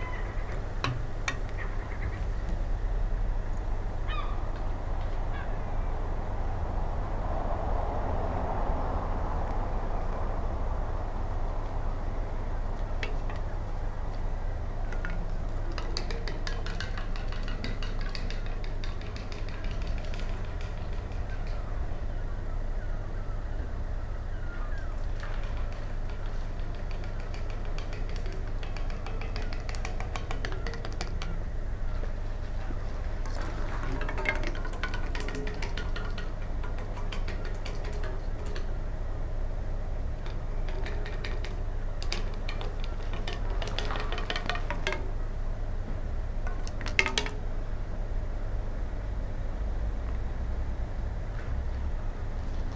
Old Iron Railings, Hart Street, Edinburgh, Edinburgh, UK - Playing on the railings with a stick
I wanted to find a way of sounding the architecture of Hart Street, and so I played the old iron railings with a stick. I like when the seagulls join in.